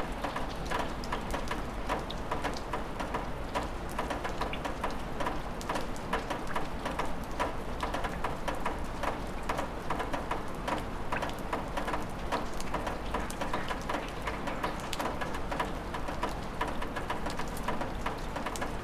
Moscow, Granatnyy side-street - Spring Thaw
Early spring, Thaw, Dripping water